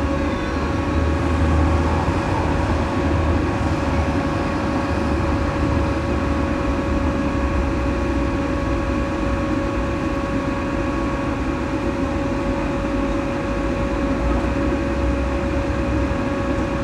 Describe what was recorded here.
on several sites in the city for maribor2012 european capital of culture there are large inflated lit globes on trapezoidal wooden constructions. they are kept inflated with a constant fan that blends with the surrounding soundscape.